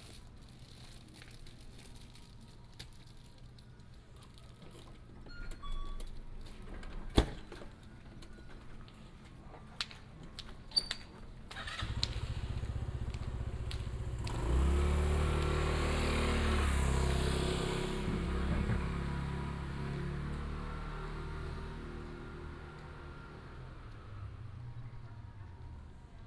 金倫門市, Jinlun, Taimali Township - Small village
At the convenience store, Garbage truck, Bird call, Small village
Binaural recordings, Sony PCM D100+ Soundman OKM II
Taitung County, Taiwan